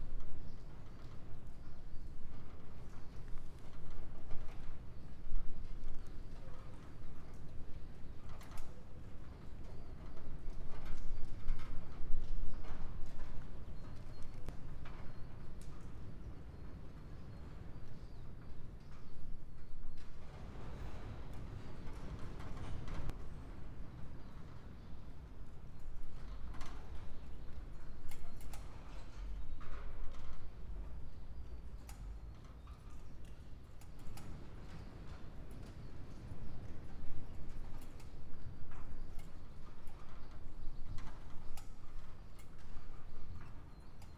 Buzludzha, Bulgaria, inside hall - Buzludzha, Bulgaria, large hall 3
The longer I heard it the more it sounded like music, a quiet requiem for the communist hope while the wind is roraring